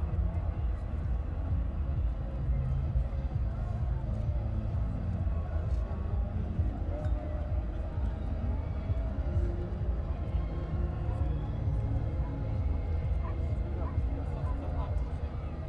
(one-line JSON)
{"title": "molo Audace, Trieste, Italy - weekend ambience, competing electroacoustics", "date": "2013-09-08 01:00:00", "description": "weekend ambience at Molo Audace, Trieste. two soundsystems, Tango and Techno, competing.\n(SD702, AT BP4025)", "latitude": "45.65", "longitude": "13.77", "altitude": "14", "timezone": "Europe/Rome"}